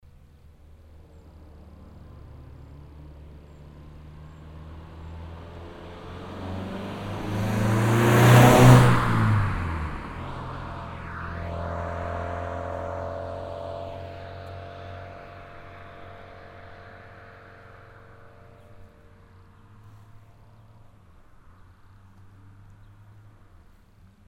the sound of traffic
here a single car followed by a motorbike
Project - Klangraum Our - topographic field recordings, sound objects and social ambiences